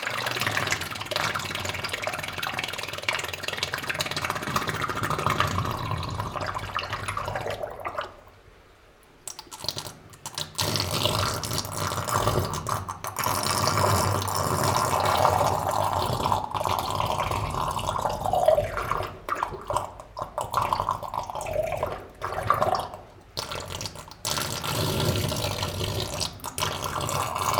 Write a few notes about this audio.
Into the underground iron mine. This is a place I know as the farting tube. Water is entering into a small tube, below a concrete wall. A small vortex makes farts. But today, there's very much more water as habitually, essentially because it's raining a lot since 2 months. Lot of water means this tube is vomiting. Indeed, water constantly increases and decreases, making this throw up belching sounds. Is this better than farting ? Not sure !